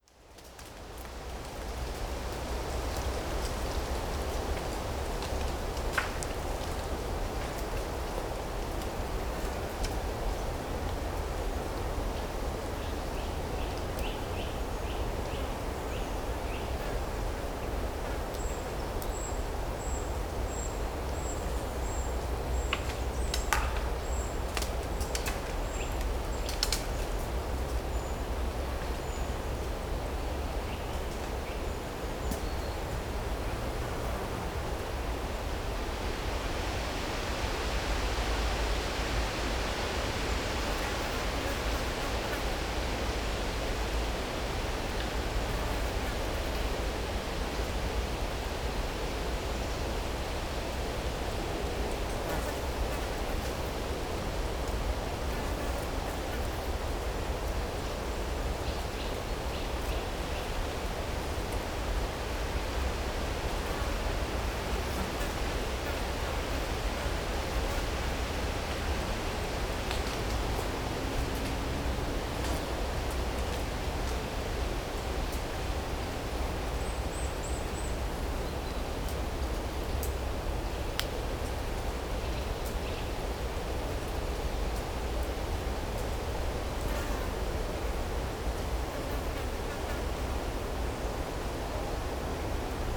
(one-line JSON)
{"title": "Morasko nature reserve - autumn in the forest ambience", "date": "2018-09-12 12:39:00", "description": "another spot in Morasko Nature reserve. trees and wind make a mesmerizing sound in the forest. stronger gusts bring down leaves and acorns, breaking branches. some traffic from the nearby road (roland r-07 internal mics)", "latitude": "52.48", "longitude": "16.90", "altitude": "135", "timezone": "Europe/Warsaw"}